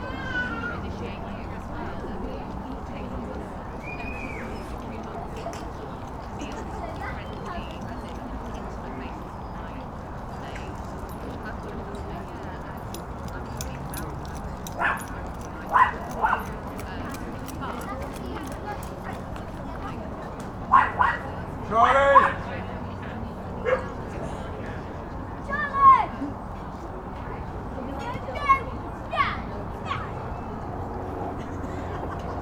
Oxford, UK - outside restaurant, ambience
ambience outside of a restaurant near river Thames, at a sunny Saturday afternoon in early spring
(Sony PCM D50)